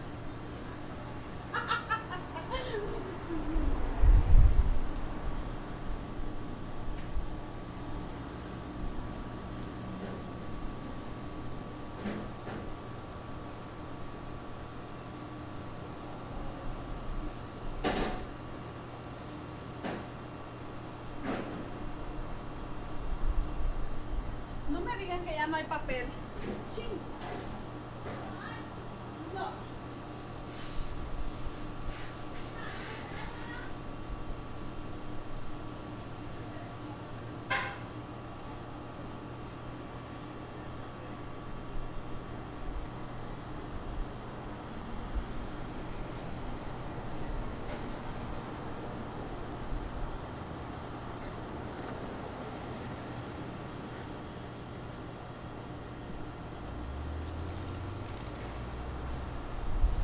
Manitou Ave, Manitou Springs, CO, USA - Restaurant Kitchen Atmosphere
kitchen shuffling, doors opening and closing. Faint sounds of people talking in Spanish. Appliance hums throughout recording. Talking gets louder, a woman chuckles. Middle of the day, so not too busy. More talking and cooking, pans clanking. Car pulls up behind the restaurant.